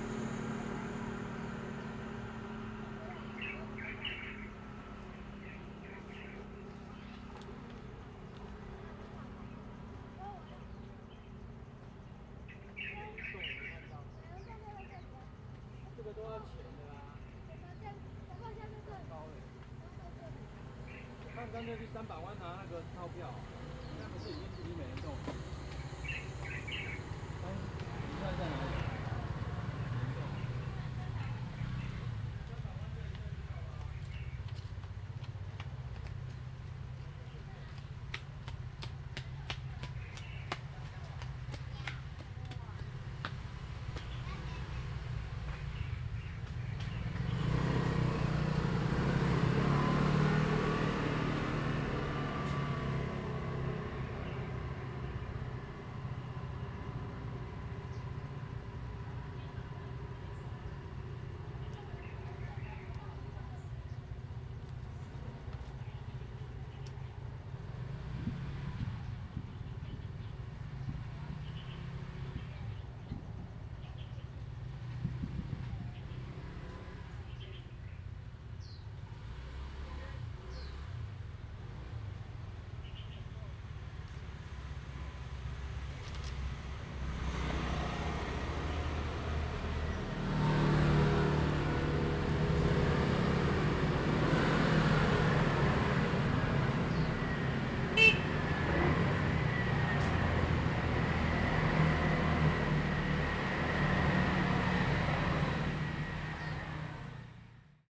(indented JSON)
{
  "title": "Hsiao Liouciou Island, Pingtung County - Birds singing",
  "date": "2014-11-01 10:53:00",
  "description": "In the side of the road, Birds singing, Traffic Sound\nZoom H2n MS +XY",
  "latitude": "22.35",
  "longitude": "120.38",
  "altitude": "14",
  "timezone": "Asia/Taipei"
}